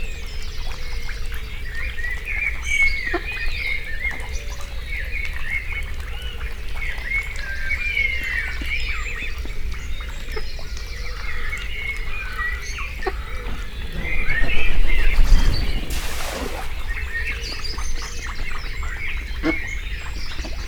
Recordings in the Garage, Malvern, Worcestershire, UK - Duck Pond
Hungry mallard greet the day, in fact 14 young ducks ready to fly with the female who nested on the roof of our summer house next to the pond.
Mix Pre 6 II with 2 x Beyer MCE 5 Lavaliers.
England, United Kingdom, August 2021